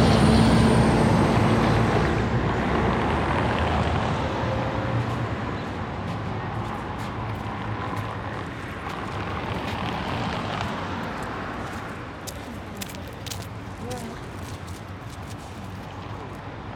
Soldiner Straße/Koloniestraße, Berlin, Deutschland - Soldiner Straße/Koloniestraße, Berlin - traffic, passers-by, passengers waiting for the bus
Soldiner Straße/Koloniestraße, Berlin - traffic, passers-by. Soldiner Straße and Koloniestraße are both streets with moderate traffic. After a few minutes, several workers gather around the two bus stops. They continue their chatting and laughing from one side of the street to the other until they finally catch their bus. Thanks to the near Tegel airport there is no place in Soldiner Kiez without aircraft noise.
[I used the Hi-MD-recorder Sony MZ-NH900 with external microphone Beyerdynamic MCE 82]
Soldiner Straße/Koloniestraße, Berlin - Verkehr, Passanten. Sowohl die Soldiner Straße als auch die Koloniestraße sind mäßig befahren. Nach einer Weile sammeln sich mehr und mehr Arbeiterinnen an den beiden Bushaltestellen. Bis sie einsteigen und abfahren, führen sie ihr Gespräch auch über die Straße hinweg fort. Durch den nahen Flughafen Tegel gibt es keinen Ort im Soldiner Kiez, an dem nicht in regelmäßigen Abständen Fluglärm zu hören wäre.